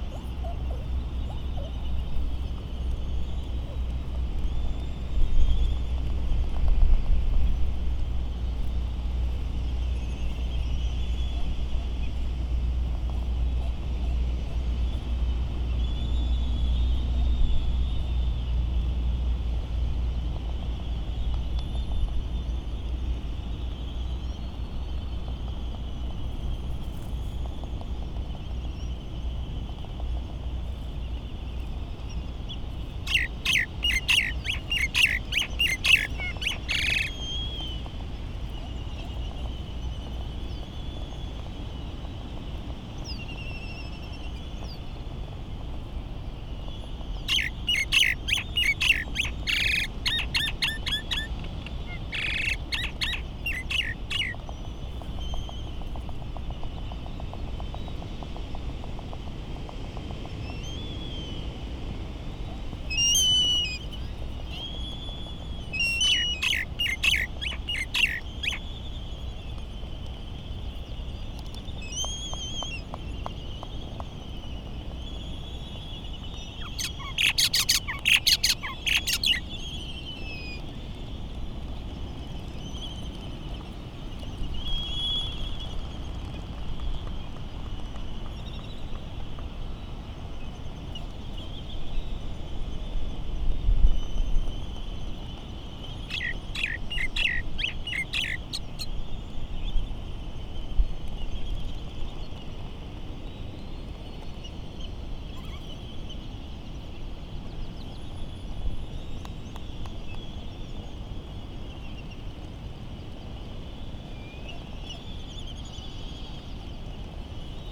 Laysan albatross soundscape ... Sand Island ... Midway Atoll ... recorded in the lee of the Battle Of Midway National Monument ... open lavalier mics either side of a furry covered table tennis bat used as a baffle ... laysan calls and bill rattling ... very ... very windy ... some windblast and island traffic noise ...